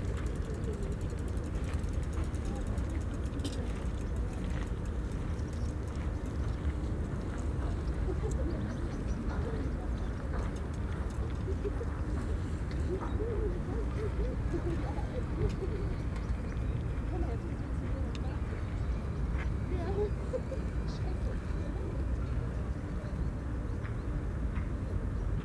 Ljubljanica river meet Grubarjev canal, ambience
(Sony PCM D50, DPA4060)